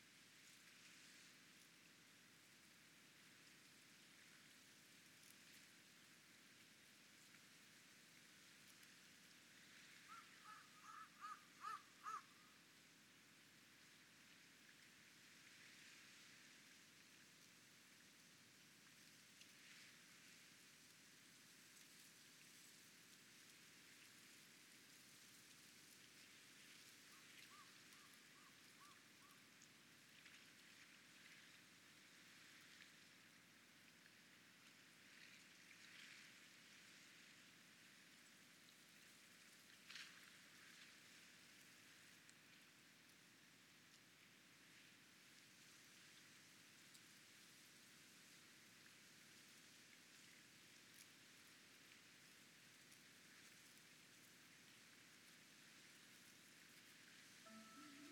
Japan, Nagasaki, KitamatsuuraOjika, Nozakigō, 野崎港 - Nozaki Island Emergency Warning System Test
Nozaki Island is now uninhabited but the loudspeaker emergency warning system is maintained for visitors.
17 April 2019